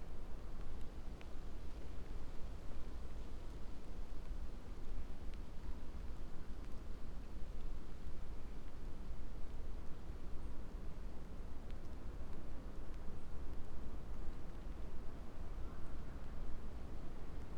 dale, Piramida, Slovenia - distant creaks, light rain
quiet winter forest ambience, crows